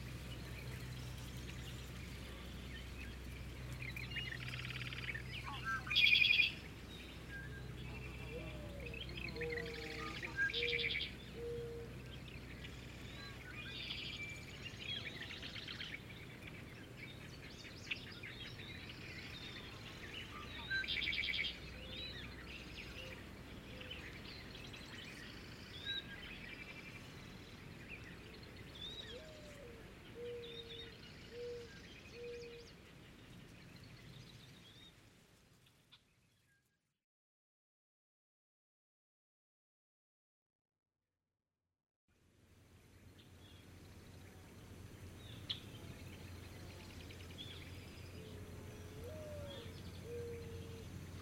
Wharton State Forest, NJ, USA - Penn Swamp Dawn
Two brief, joined recordings of a single dawnchorus at Penn Swamp located deep off the beaten track in Wharton State Forest. (Fostex FR2-LE; AT3032)
1 May, 05:30